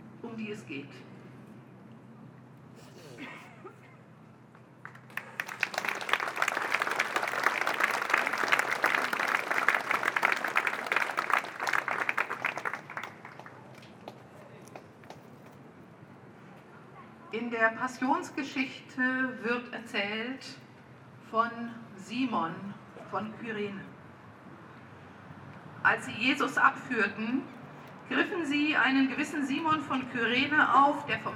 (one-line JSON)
{"title": "Hamburg, Deutschland - Demonstration", "date": "2019-04-19 12:45:00", "description": "Hauptkirche St. Petri & Speersort. A small demonstration in the street. One hundred people want to welcome refugees in Germany. Catholic speech and religious song.", "latitude": "53.55", "longitude": "10.00", "altitude": "10", "timezone": "GMT+1"}